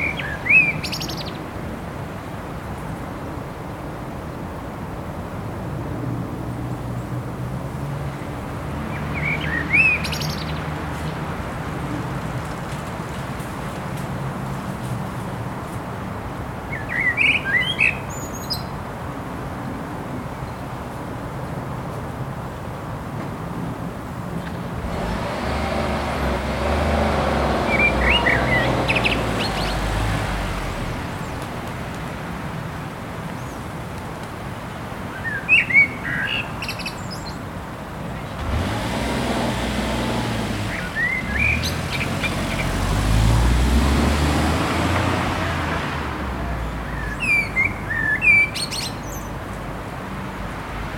bird song, traffic noise, car
walker, city noise, cyclist
Captation : Zoomh4n